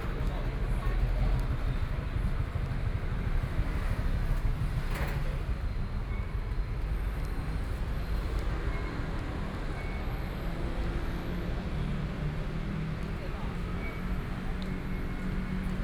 Sec., Neihu Rd., Neihu Dist. - walking on the Road
walking on the Road, Traffic Sound
Binaural recordings